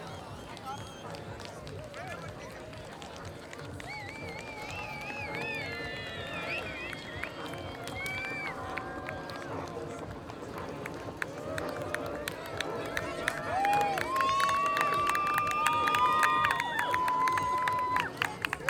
ish, South Africa - Pipe Dreams Burn
Inner perimeter perspective of the burning oif the art piece Pipe Dreams at 2019 Afrikaburn. Recorded in ambisonic B Format on a Twirling 720 Lite mic and Samsung S9 android smartphone
Namakwa District Municipality, Northern Cape, South Africa